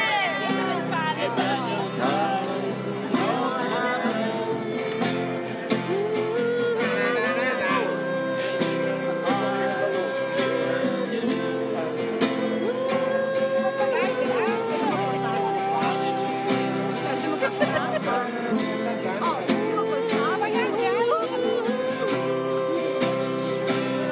diversa-mente
giornata in piazza DIVERSAMENTE 10 OTTOBRE